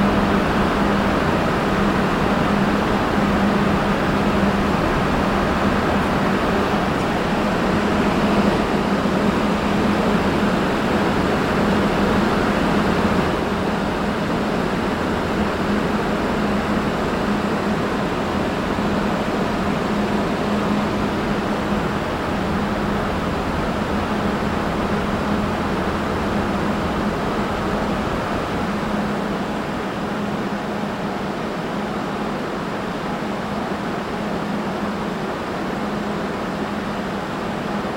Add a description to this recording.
Walking Festival of Sound, 13 October 2019, Industiral units, electrical noise/hum, 3 in a row.